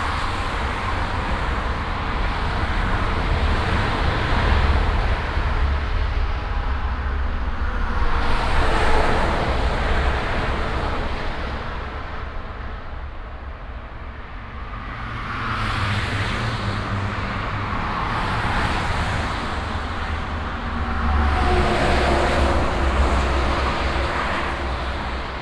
cologne, brück/refrath, traffic on highway a4
soundmap nrw: social ambiences/ listen to the people in & outdoor topographic field recordings